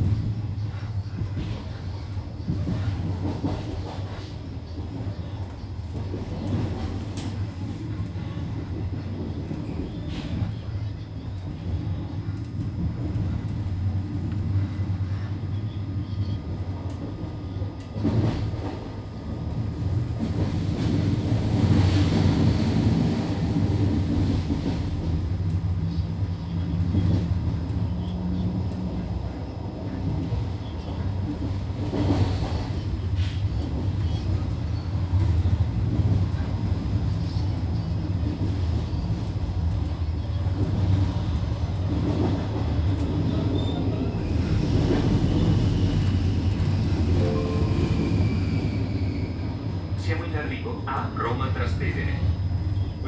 Roma Termini, Roma RM, Italien - Regionale Veloce - Roma Termini (15:00)
train sounds. starting and stopping. people chatting. mobile phones ringing. the start of a journey.